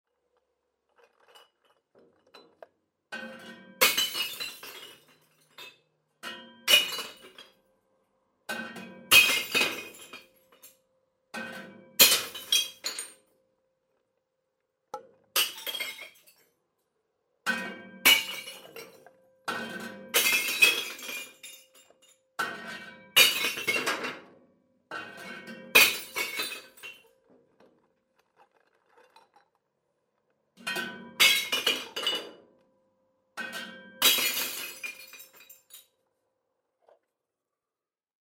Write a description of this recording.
parking place in front of supermarket. stafsäter recordings. recorded july, 2008.